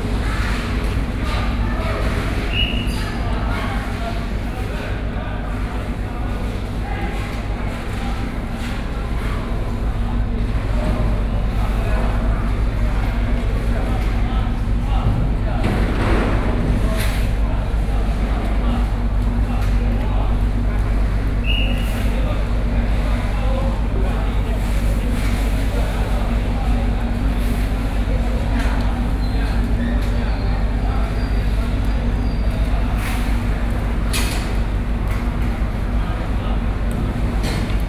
In the fishing port, The weather is very hot
6 September 2014, 15:20